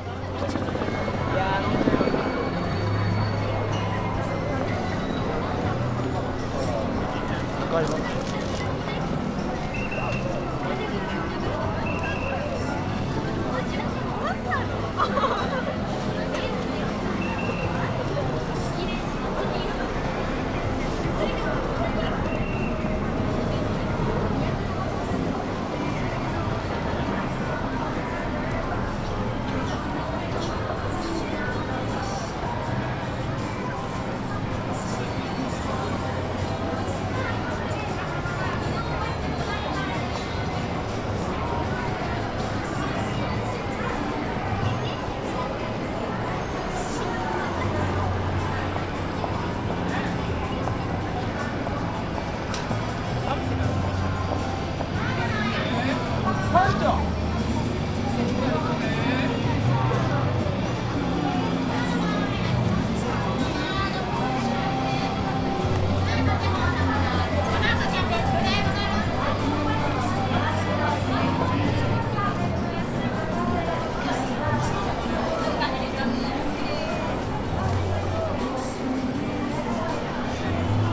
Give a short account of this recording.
sounds from one of the alleys in busy Ginza district, lots of people around, restaurants and bars as well as pachinko parlors are full, streets vibrant with night life.